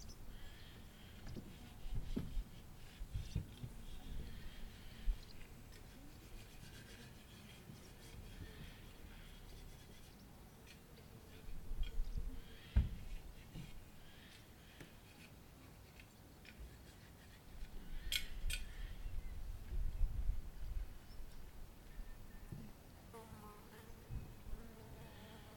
Puchen, Puchen, Rakousko - A morning in the campsite
A sunny July morning in the campsite in the Alps. Recorded with Zoom H2n.
Steiermark, Österreich, 24 July, 10:50